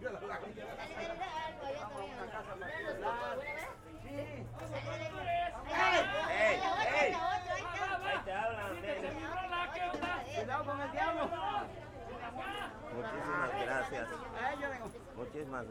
C., Centro, Mérida, Yuc., Mexique - Merida - les Mariachis
Merida - Mexique
Les Mariachis